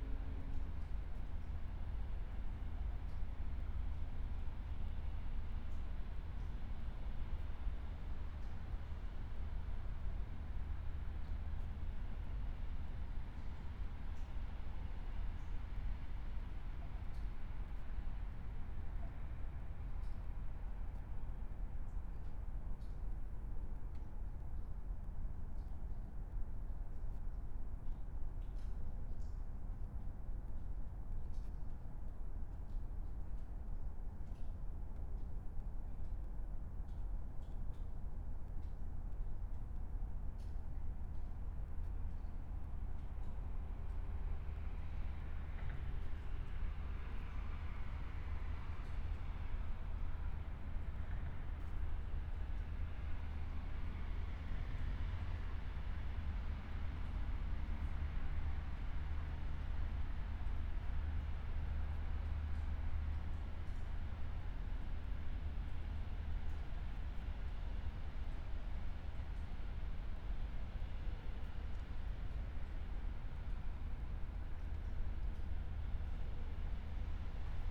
river Traun railway bridge, Linz - under bridge ambience
05:23 river Traun railway bridge, Linz
Oberösterreich, Österreich, 10 September 2020